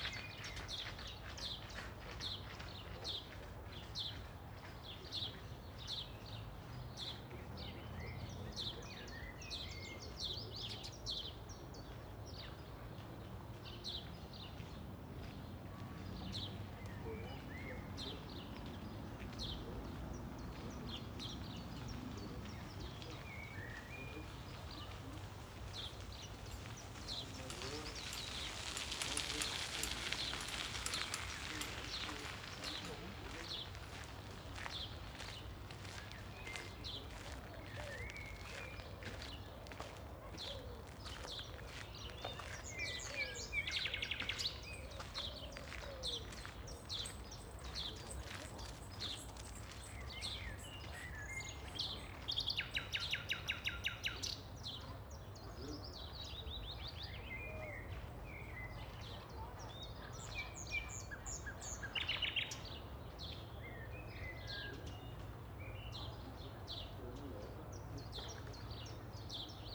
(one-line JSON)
{"title": "An den Knabenhäusern, Berlin, Germany - Riverside path, evening sounds in the gravel", "date": "2012-04-28 19:58:00", "description": "Passing walkers, joggers and cyclists on gravel", "latitude": "52.50", "longitude": "13.48", "altitude": "29", "timezone": "Europe/Berlin"}